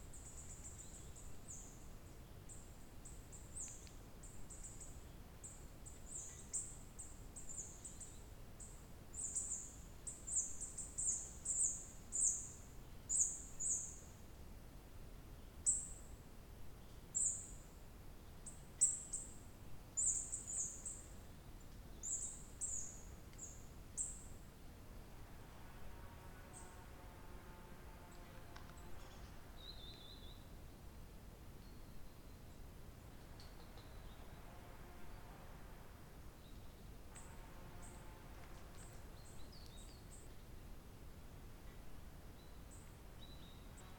Platak, Croatia, Birds - Birds Fly Chainsaw